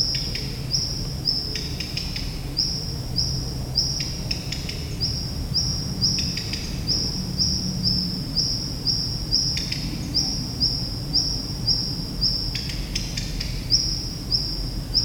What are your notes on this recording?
Into the 'AGC Roux' abandoned factory, an angry Common Redstart, longly shouting on different places of a wide hall.